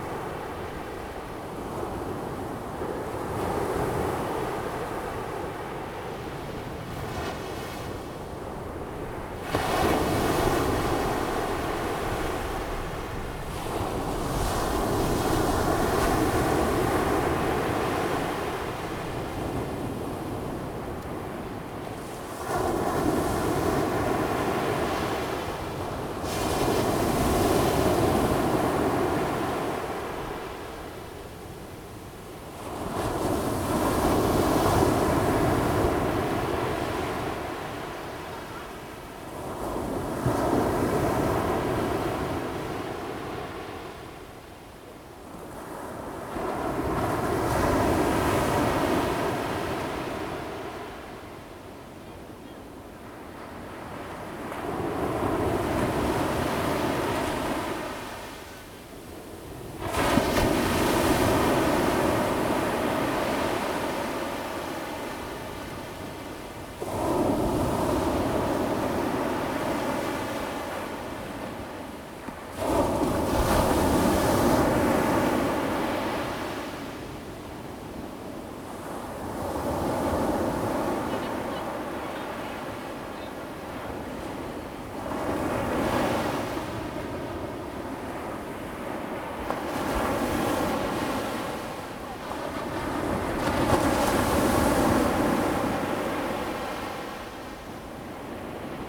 Qianzhouzi, Tamsui Dist., New Taipei City - On the beach
On the beach, Sound of the waves
Zoom H2n MS+XY
New Taipei City, Tamsui District, 4 January 2017